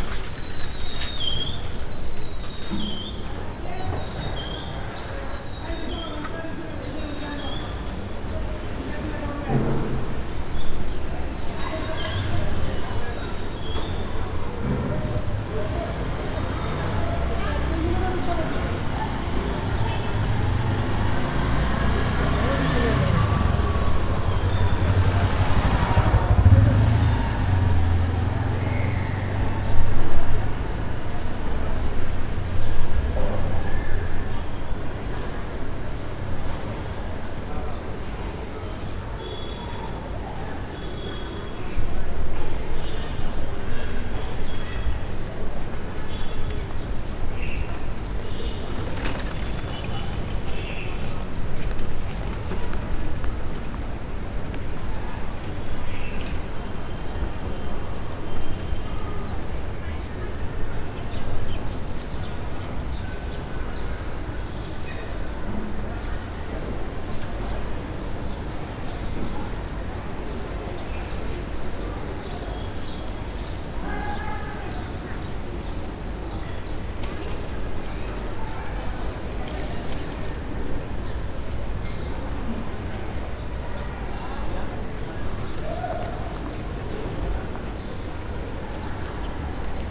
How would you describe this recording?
Uttara is a upper middleclass/ middleclass neighbourhood built near Dhaka internation airport, outside the main city area. This a summer afternoon recording, I was standing with the mic on a small street, off-main road.